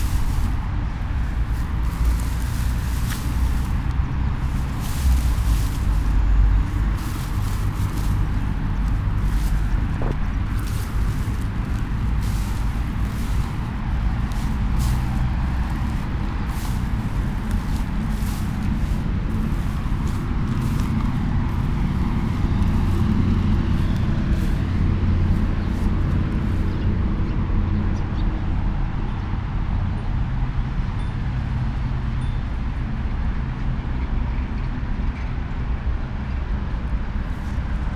Kamogawa river, Kyoto - walk along